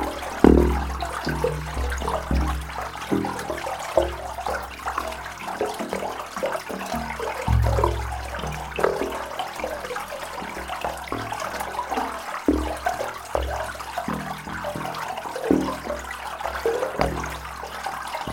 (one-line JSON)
{"title": "Differdange, Luxembourg - Singing pipe", "date": "2018-02-02 19:48:00", "description": "This is one of the many singing pipe you can find in the abandoned iron mines. On this evening, water level was very high and I made a big dam, in aim to make the pipe sings. Without the dam, it was flooded. This is definitely not the best singing pipe, but this is a rare one where air is good and where I can stay more than 2 minutes. On the other places I know, air is extremely bad (and dangerous). That's why I made a break here, recording my loved pipe, seated on the cold iron ground. Could you think that exactly now, when you're hearing this sound, the pipe is still singing probably a completely different song, because of a constantly changing rain ? I often think about it. How is the song today ?", "latitude": "49.51", "longitude": "5.87", "altitude": "394", "timezone": "Europe/Luxembourg"}